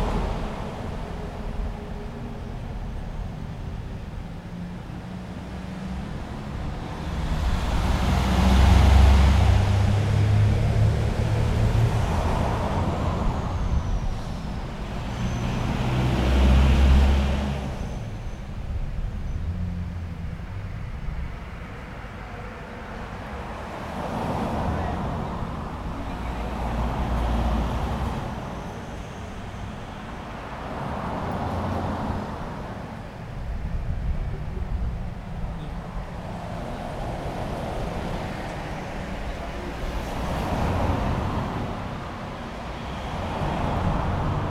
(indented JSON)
{
  "title": "Міст, Вінниця, Вінницька область, Україна - Alley12,7sound11soundunderthebridge",
  "date": "2020-06-27 12:24:00",
  "description": "Ukraine / Vinnytsia / project Alley 12,7 / sound #11 / sound under the bridge",
  "latitude": "49.23",
  "longitude": "28.47",
  "altitude": "231",
  "timezone": "Europe/Kiev"
}